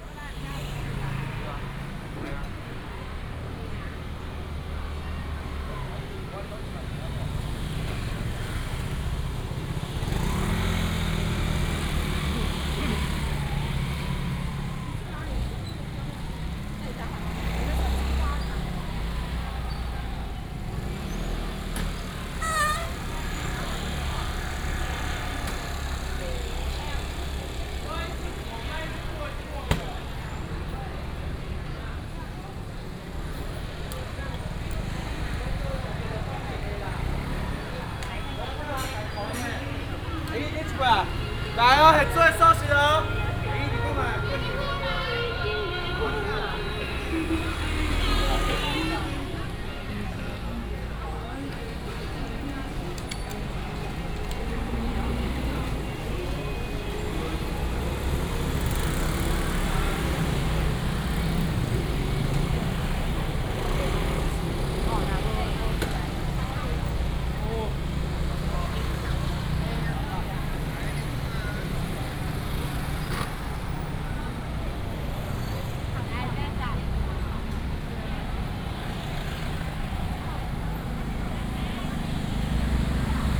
Minquan Market, Changhua City - Walking in the market
Walking in the market, Traffic sound, A variety of vendors
Changhua County, Taiwan, January 2017